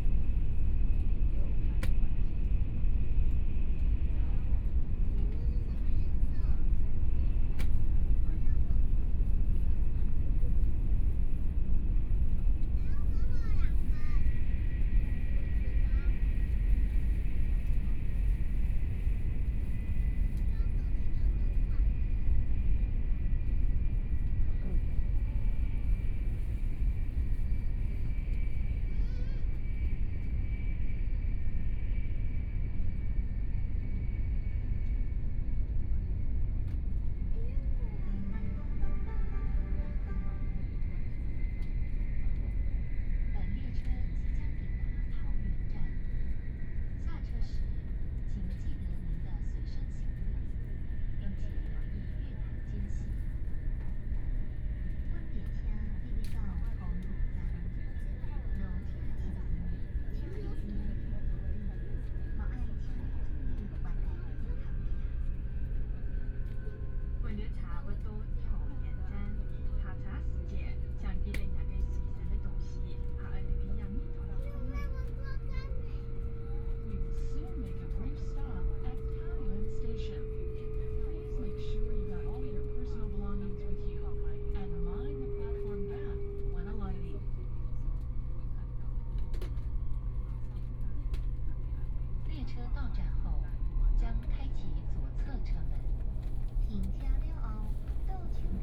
from Banqiao Station to Taoyuan Station, Binaural recordings, Zoom H4n+ Soundman OKM II